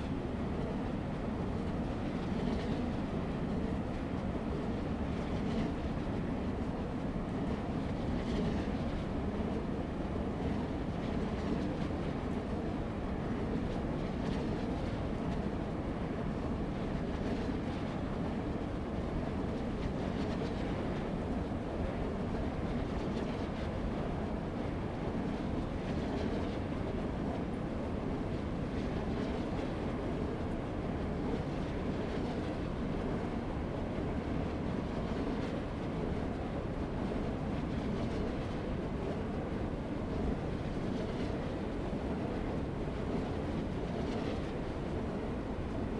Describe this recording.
Wind power tower, In the parking lot, Zoom H2n MS+XY